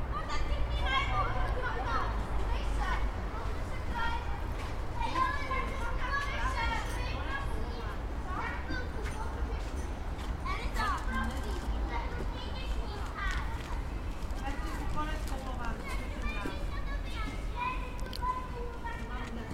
Na Slupi, children play ground
Children playing in the snow at the playground leisure time center opposite to the hospital of Saint Alzbeta.